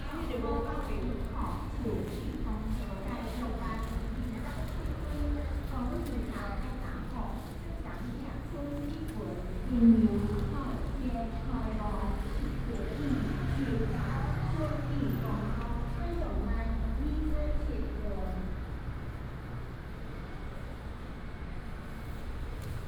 Tongxiao Station, Tongxiao Township - In the station hall
In the station hall
Miaoli County, Taiwan, January 2017